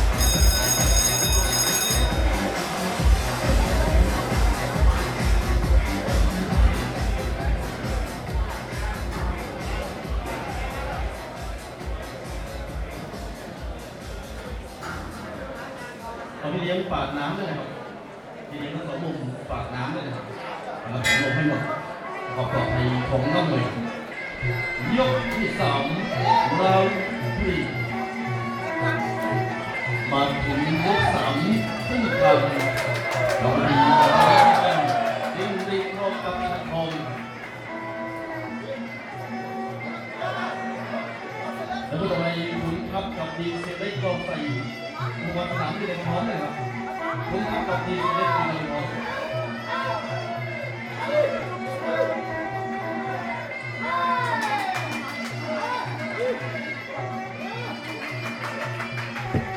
Muay Thai fighting part 2 in CM Boxing Stadium